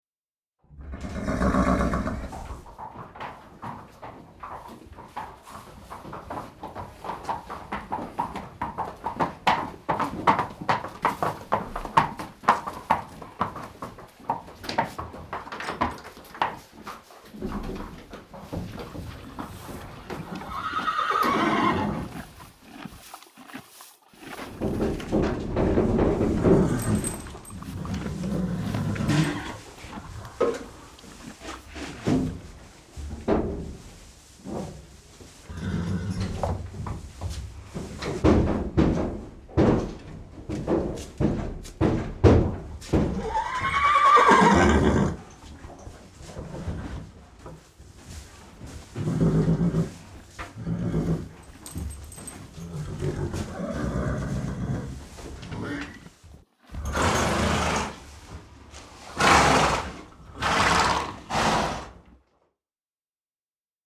Stoppenberg, Essen, Deutschland - essen, bruch street, horse stable
Auf einem Reiterhof in einem Pferdestall. Der Klang der schnaubenden Heu essenden Tiere, Pferdeschritte auf dem Steinboden des Stalls.
Inside a horse stable on a horse barn.
Projekt - Stadtklang//: Hörorte - topographic field recordings and social ambiences
Essen, Germany, March 2014